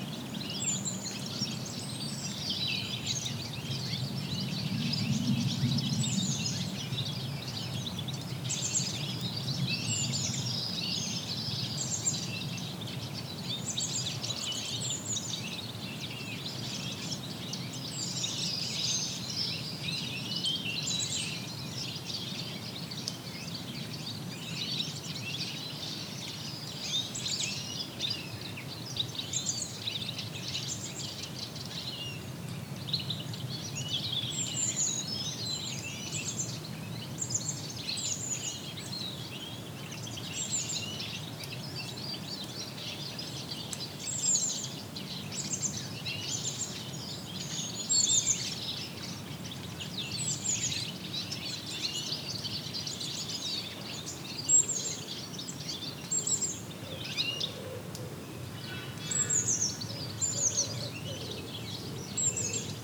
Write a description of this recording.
A lot of city noises (cars, trains, planes, chainsaws, walkers) and behind the hurly-burly, a colony of Redwing, migratory birds, making a stop into this small pines forest.